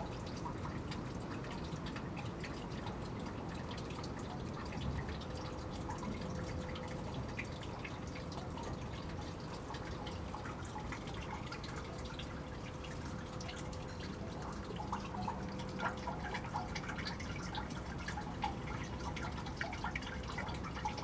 Contención Island Day 32 outer east - Walking to the sounds of Contención Island Day 32 Friday February 5th
The Drive Moor Crescent Moorfield Jesmond Dene Road Friday Fields Lane Towers Avenue Bemersyde Drive Deepwood
Drizzle
blown on the east wind
Rain gathers
runs and drops
into a grating
a dog barks